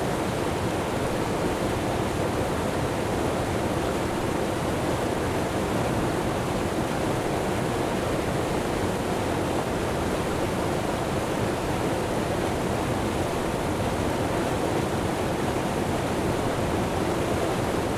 neoscenes: headwaters of the Rio Grande
CO, USA